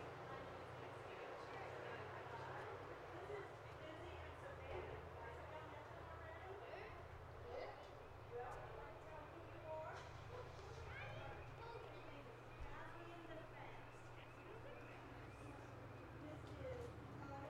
Fairhaven, Bellingham, WA, USA - Kids Playing Tag in Fairhaven Green
Kids playing tag in Fairhaven 'green'.